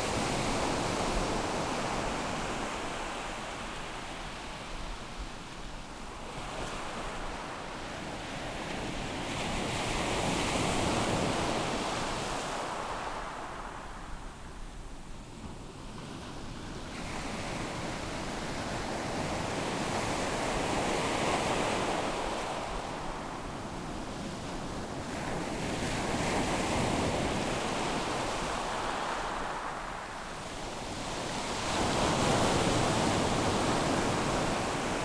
Taitung City, Taiwan - Sound of the waves
At the seaside, Sound of the waves, Very hot weather
Zoom H6 XY